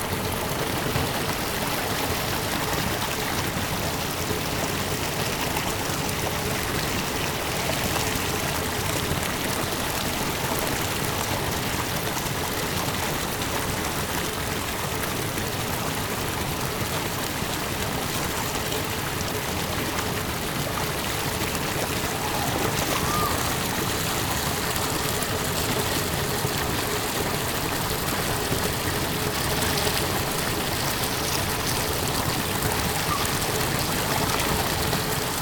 nasino, pennavaire, water sounds
different water sounds of the river pennavaire
soundmap international: social ambiences/ listen to the people in & outdoor topographic field recordings